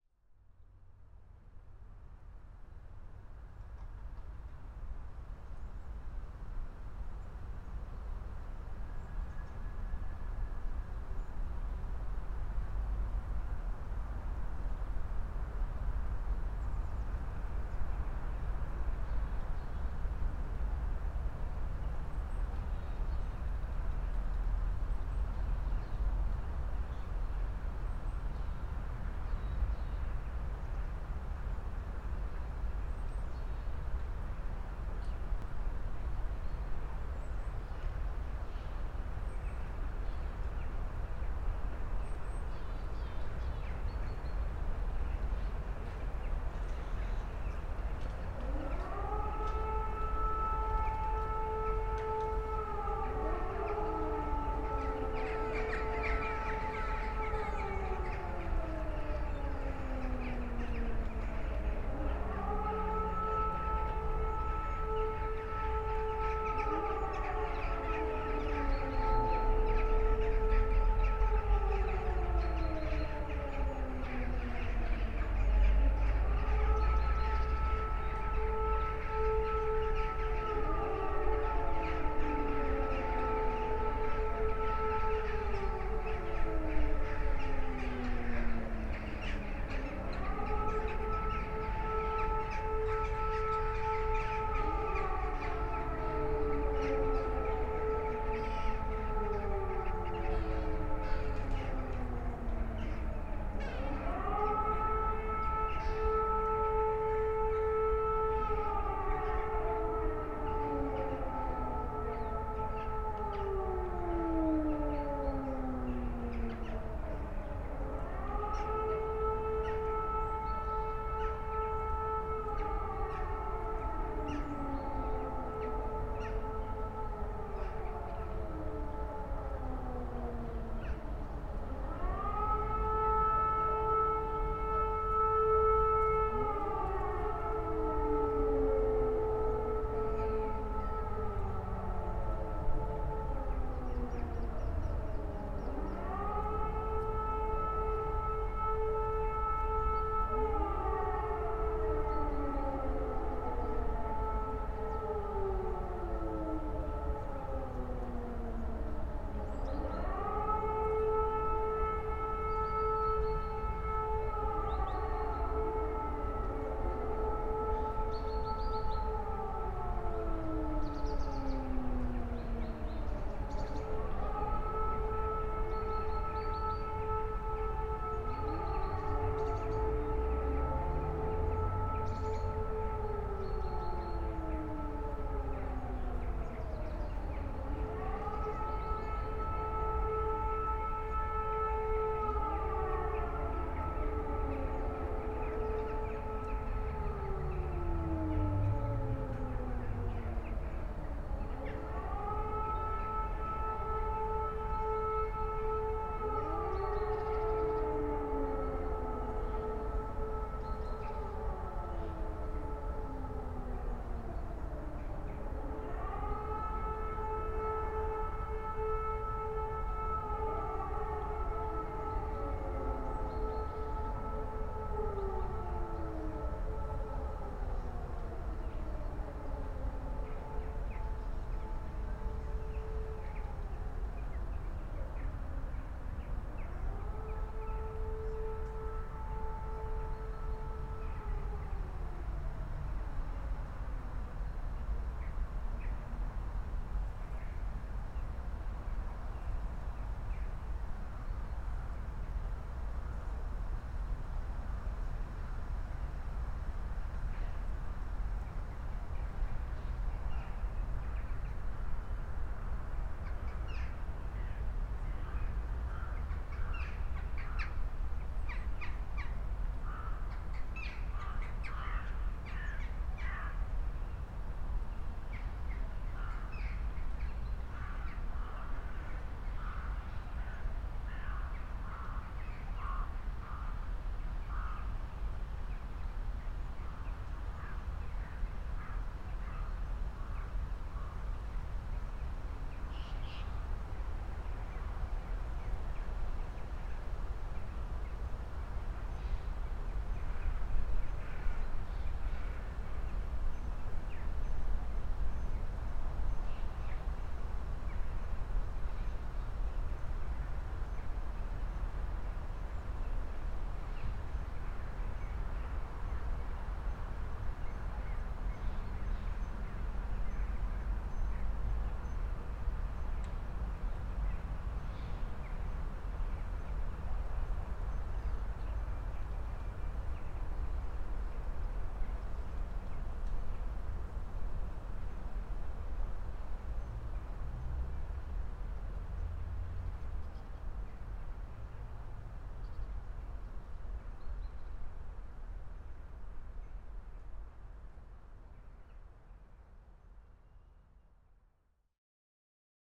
Utena, Lithuania, testing the sirens
testing the public warning and information system
19 October, ~12:00